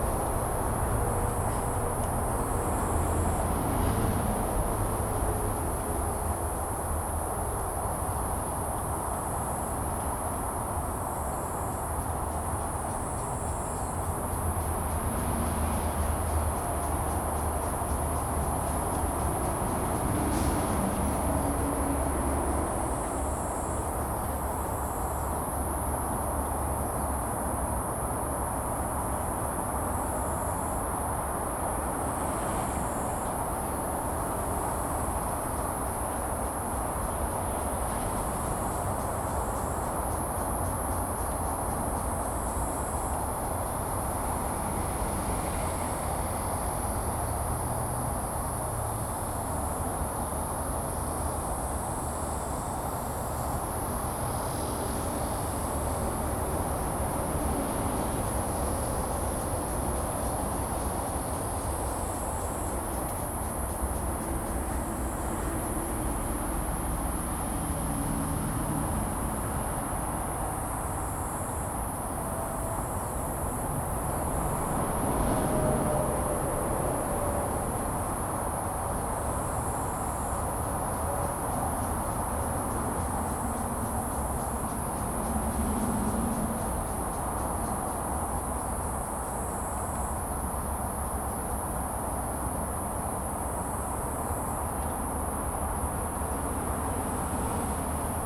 {"title": "Xuefu St., Hukou Township - Next to the bamboo forest", "date": "2017-08-12 17:05:00", "description": "Next to the bamboo forest, Cicada cry, Close to the highway\nZoom H2n MS+XY", "latitude": "24.88", "longitude": "121.06", "altitude": "118", "timezone": "Asia/Taipei"}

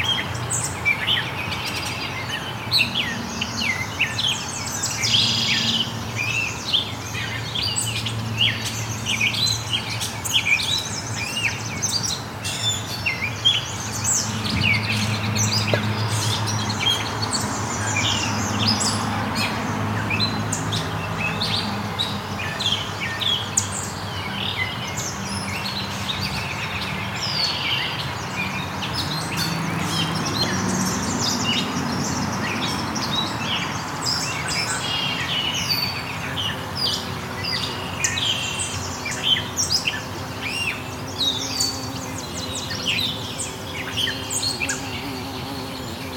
{"title": "Leamington, ON, Canada - Point Pelee National Park DeLaurier House", "date": "2022-05-21 14:04:00", "description": "Homestead of the DeLaurier family, built in the 1850s. Various migrating birds and one carpenter bee who takes a solo near the end.\nZoom H6 with MS stereo mic.", "latitude": "41.95", "longitude": "-82.52", "altitude": "178", "timezone": "America/Toronto"}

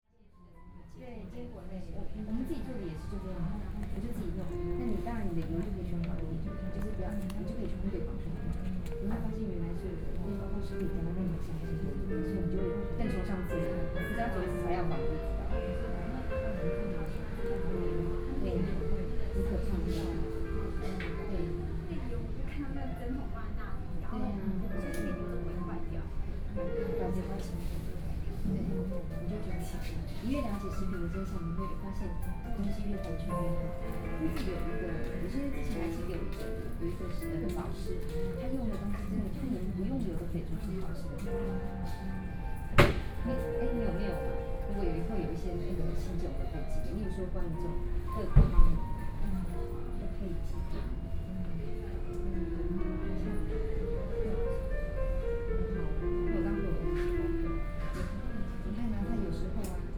{"title": "Muzha District, Taipei - Chat", "date": "2013-09-30 14:49:00", "description": "Woman in fast food, Sony PCM D50 + Soundman OKM II", "latitude": "24.99", "longitude": "121.57", "altitude": "28", "timezone": "Asia/Taipei"}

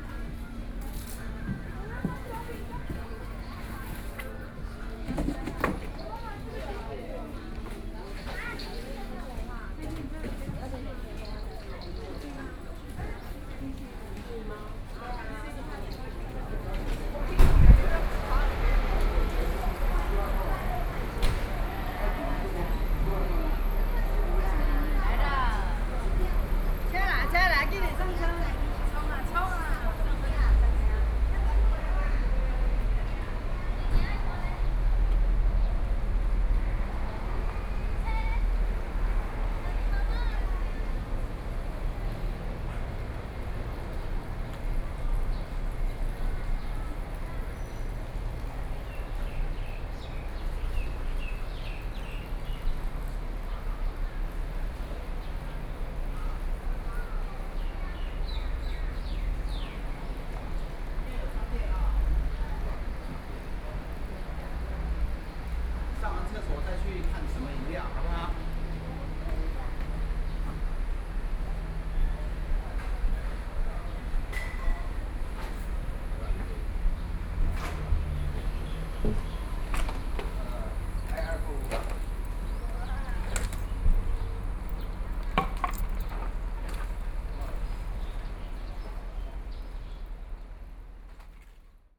{"title": "羅山村, Fuli Township - Agricultural plant", "date": "2014-09-07 14:46:00", "description": "walking in the Agricultural plant, Tourists, Birdsong, Traffic Sound", "latitude": "23.21", "longitude": "121.27", "altitude": "218", "timezone": "Asia/Taipei"}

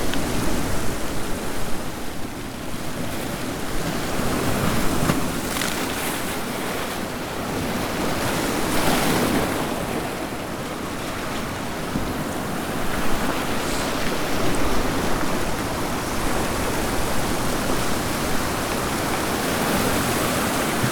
{"title": "Caretakers House, Camden Road, Crosshavenhill, Crosshaven, Co. Cork, Ireland - Crosshaven Waves", "date": "2018-11-18 12:15:00", "description": "Sound of waves as the tide comes in.", "latitude": "51.81", "longitude": "-8.28", "altitude": "2", "timezone": "Europe/Dublin"}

